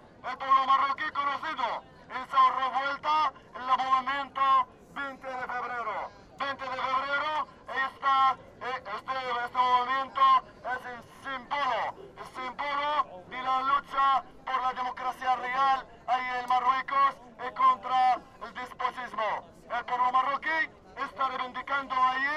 Speech done by a Morocco activist along the spanish revolution of the 15th of may. This is something it has taken place in Barcelona, but it must happen everywhere.